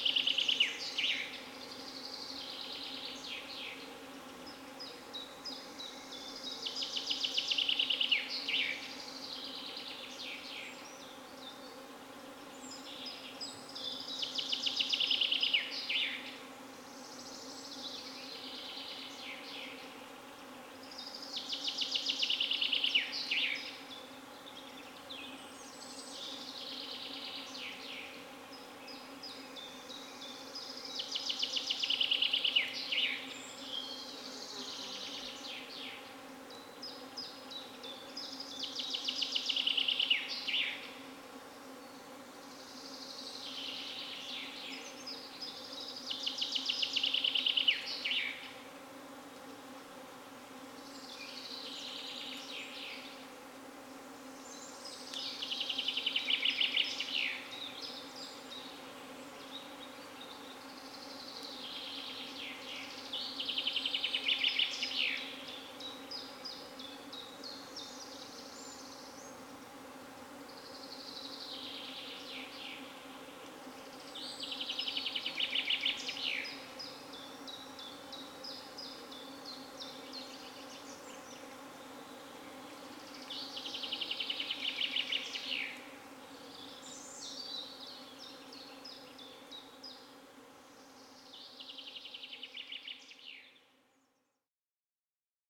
grand mosquitos chorus on the second plane...
Utenos apskritis, Lietuva, June 2022